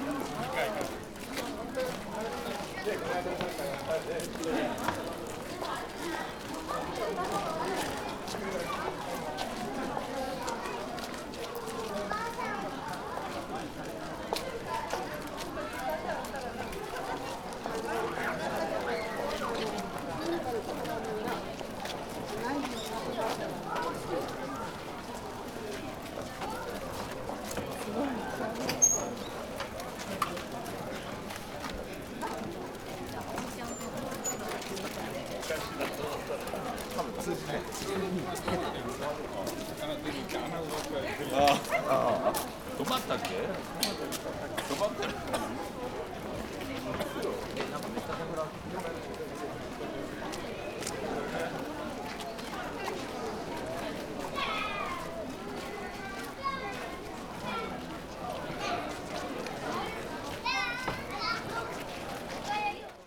Osaka, Osakajo, Osaka Castle - west entrance
a swarm of tourists walking in both directions. just entering but seems like a busy place, visited frequently, jiggling with people. recording reverberate by a gate passage nearby.